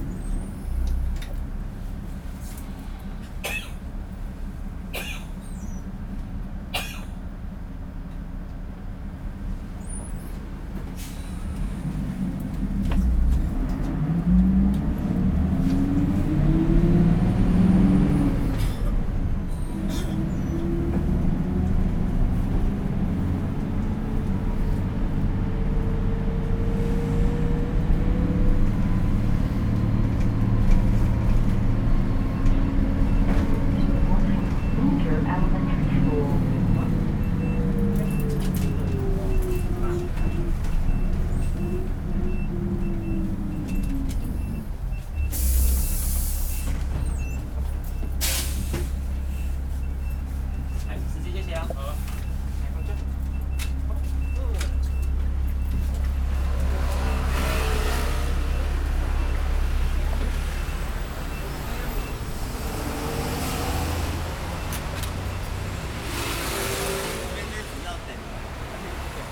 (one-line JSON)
{"title": "Mingzhi Rd., Taishan Dist. - Follow the visually impaired", "date": "2013-12-31 10:10:00", "description": "On the bus, Walking on the road, Walking through the elementary school, Traffic Sound, Zoom H6", "latitude": "25.04", "longitude": "121.42", "altitude": "19", "timezone": "Asia/Taipei"}